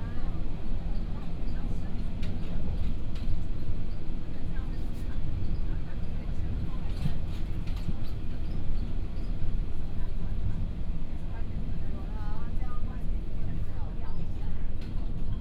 Interior of the train, from Ruiyuan Station to Guanshan Station, Binaural recordings, Zoom H4n+ Soundman OKM II
18 January 2014, 11:25